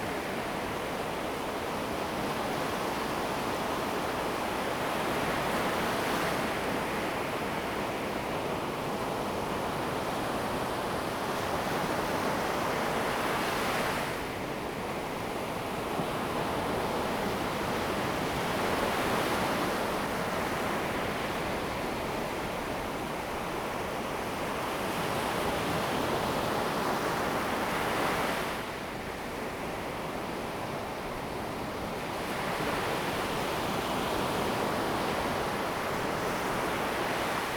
永安海濱公園, Xinwu Dist., Taoyuan City - high tide time
on the beach, high tide time, sound of the waves
Zoom H2n MS+XY
Xinwu District, Taoyuan City, Taiwan, August 2017